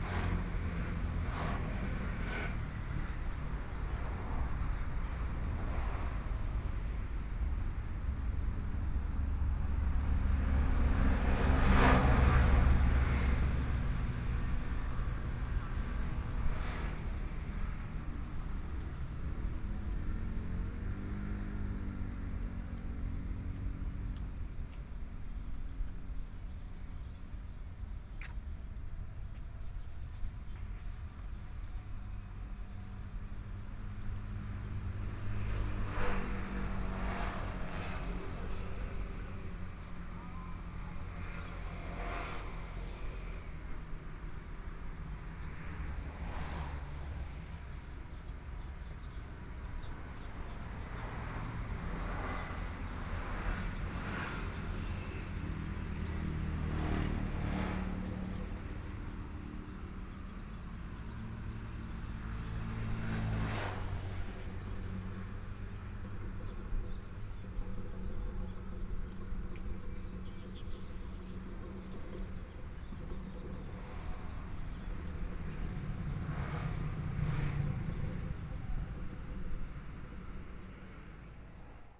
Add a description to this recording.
Sitting next to park, Traffic Sound, Distant school students are practicing traditional musical instruments, Binaural recordings, Sony PCM D100 + Soundman OKM II